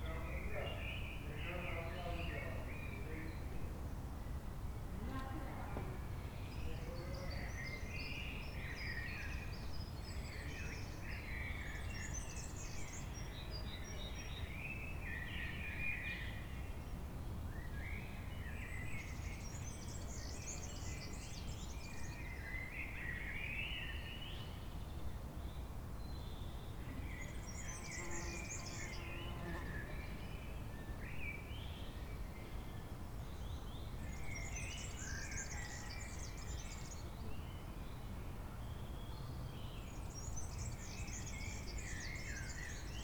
berlin, bergmannstraße: friedrichswerderscher friedhof - the city, the country & me: cemetery of dorothenstadt's and friedrichswerder's congregation
cemetery ambience, birds
the city, the country & me: april 24, 2011